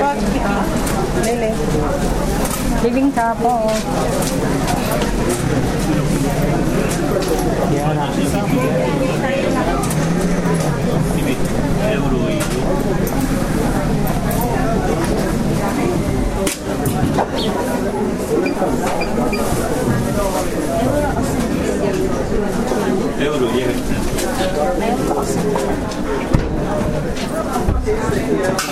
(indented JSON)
{
  "title": "bratislava, market at zilinska street - market atmosphere V",
  "date": "2010-09-18 10:45:00",
  "latitude": "48.16",
  "longitude": "17.11",
  "altitude": "155",
  "timezone": "Europe/Bratislava"
}